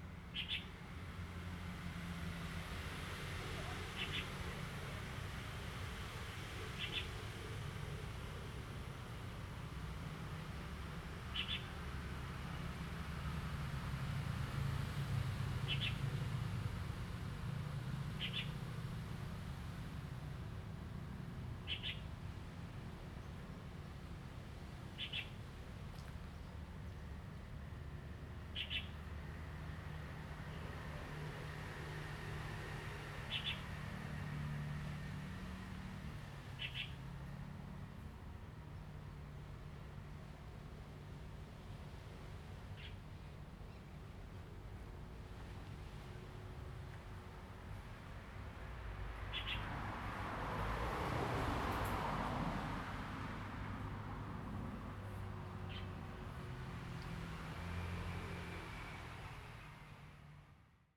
Hsiao Liouciou Island - Birds and waves
Sound of the waves, Birds singing, Tourists
Zoom H2n MS +XY
Liuqiu Township, Pingtung County, Taiwan, 1 November 2014, 10:41